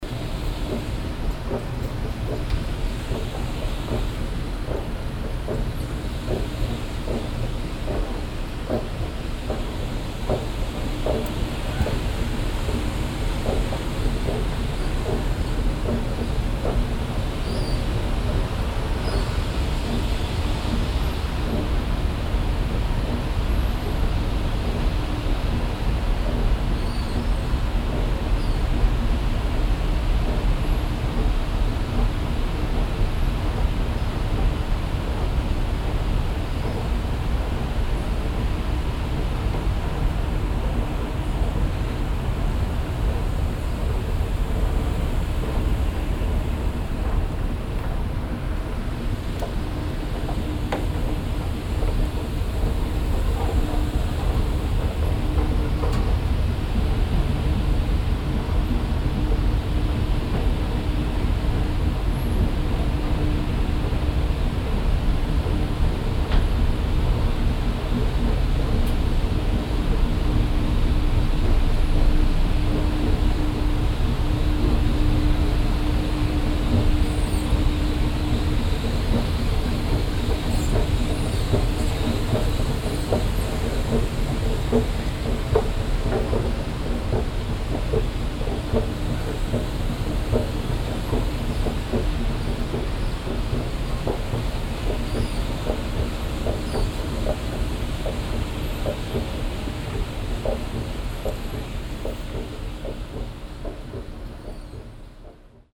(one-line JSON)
{"title": "wolfsburg, brücke zur autostadt, rolltreppe", "description": "aufgang zur stadtbrücke\ndoppelrolltreppe, morgens\nsoundmap nrw\n- social ambiences, topographic field recordings", "latitude": "52.43", "longitude": "10.79", "altitude": "59", "timezone": "GMT+1"}